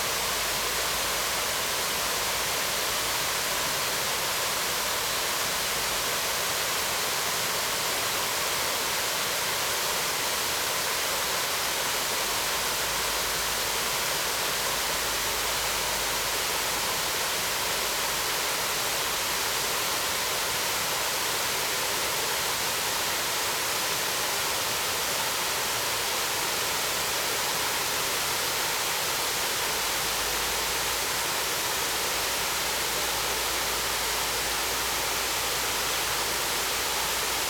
撒固兒瀑布, Hualien City - waterfall
waterfall
Zoom H2n MS+XY +Spatial Audio
Hualien City, Hualien County, Taiwan, 14 December 2016